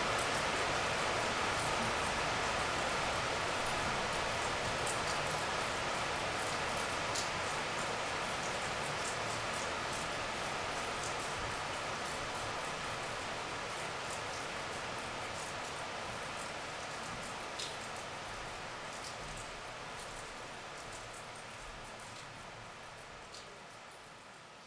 Le Fau, France - The sleet shower evening
During all the evening, a brutal sleet shower is falling on a small very solitary hamlet named Le Fau, in the Cantal mountains. From the front of a small degraded building, water is falling on the ground.
April 2017